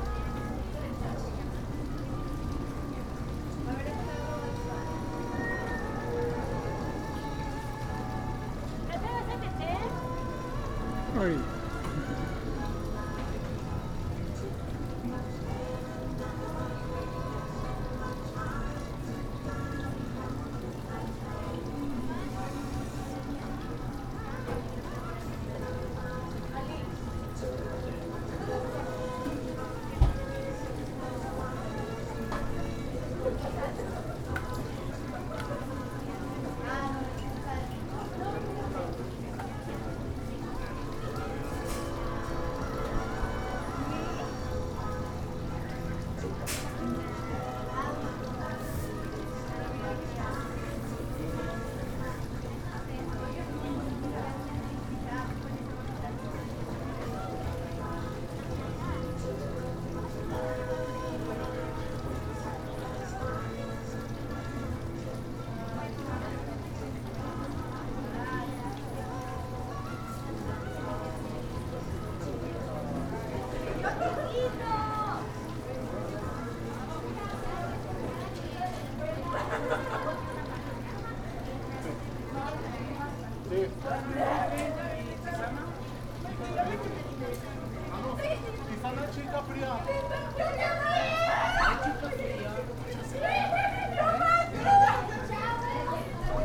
On the terrace of PanPhila coffee shop.
I made this recording on july 28th, 2022, at 7:16 p.m.
I used a Tascam DR-05X with its built-in microphones and a Tascam WS-11 windshield.
Original Recording:
Type: Stereo
Esta grabación la hice el 28 de julio 2022 a las 19:16 horas.
28 July, 19:16